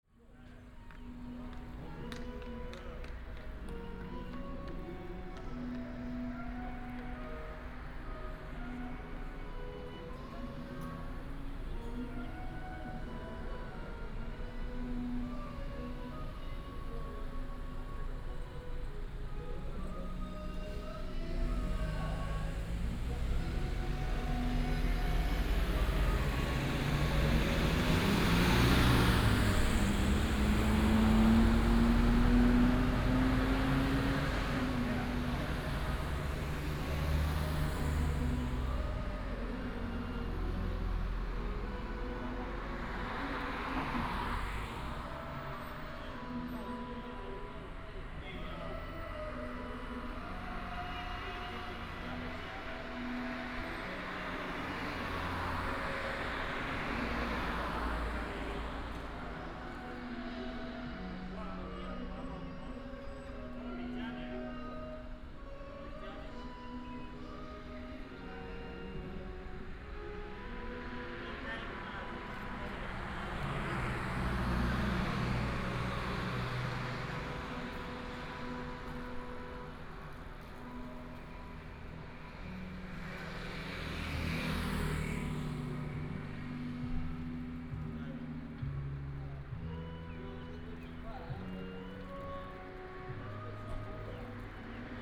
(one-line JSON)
{"title": "南迴公路19號, Xinxianglan, Taimali Township - Beside the road", "date": "2018-04-01 15:19:00", "description": "Beside the road, Traffic sound, Bird cry, Karaoke", "latitude": "22.58", "longitude": "120.99", "altitude": "12", "timezone": "Asia/Taipei"}